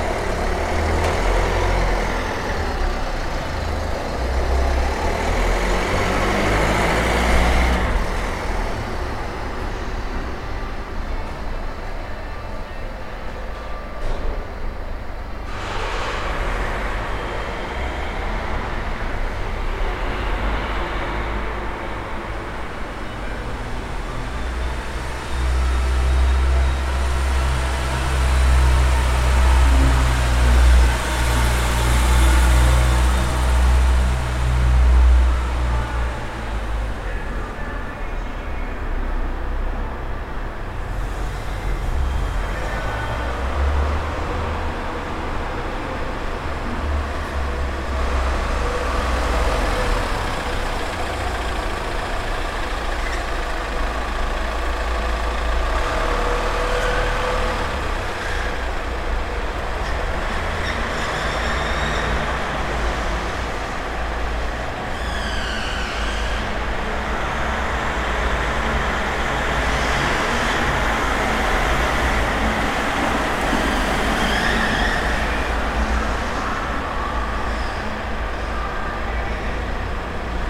Underground carpark atmosphere underneath the Kaunas bus station. Cars driving around, a radio, and other sounds. Recorded with ZOOM H5.